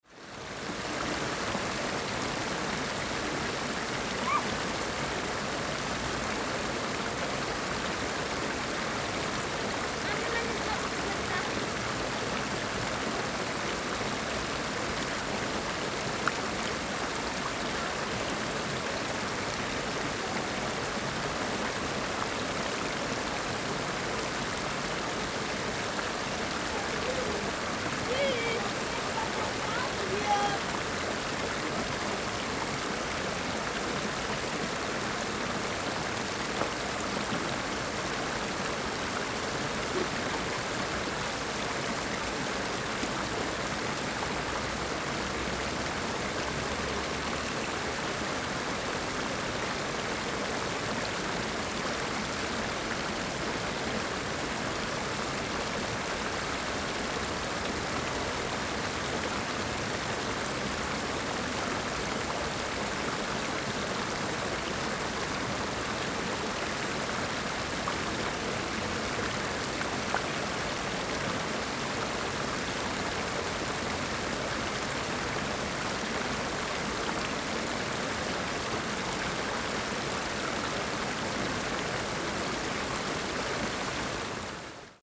water sounds and children playing at a a little artificial pond in park planten un blomen